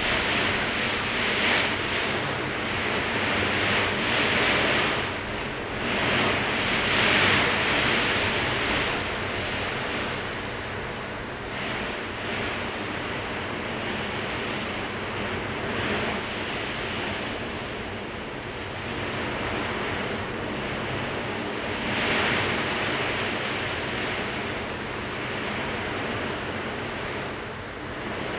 Daren St., Tamsui Dist., New Taipei City - typhoon
typhoon, wind
Zoom H2n MS+XY
New Taipei City, Taiwan, 28 September 2015